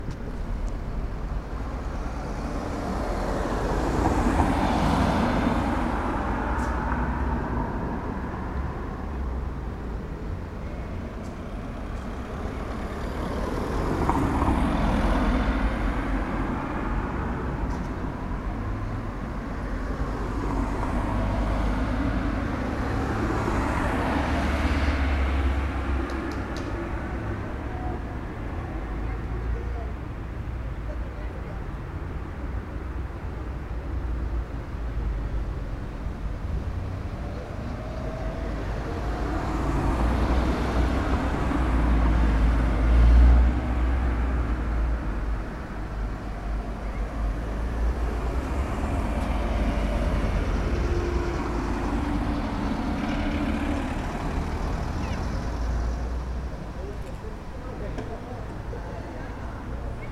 Cafova ulica, Trg Borisa Kidriča, Maribor, Slovenia - corners for one minute
one minute for this corner: Cafova ulica and Trg Borisa Kidriča